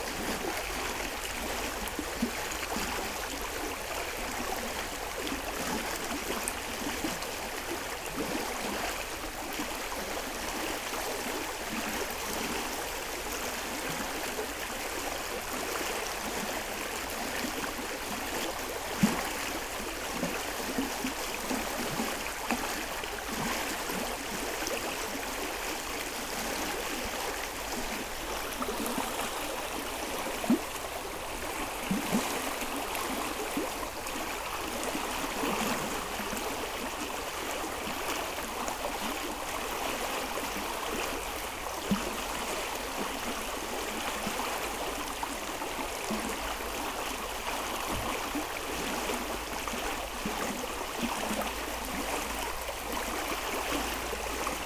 Mont-Saint-Guibert, Belgique - Orne river
Sounds of the Orne river, with waves because of the constant rain. A blackbird is fighting another one because it's the mating season.